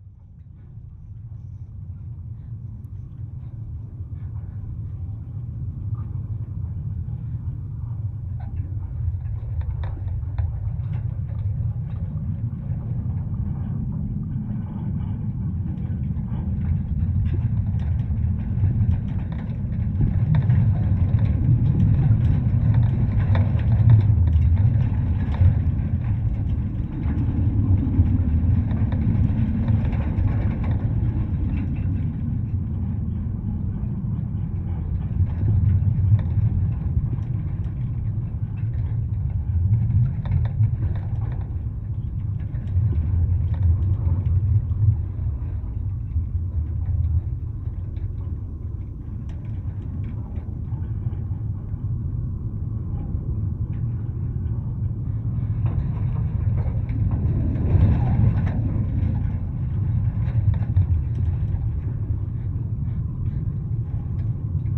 Lithuania, soviet cars museum/ fence
soviet cars museum near Moletai, Lithuania. the atmosphere...hm, the sound of fence through contact mics shows the atmosphere best of all
Molėtų rajono savivaldybė, Utenos apskritis, Lietuva, 26 October 2019, 11:00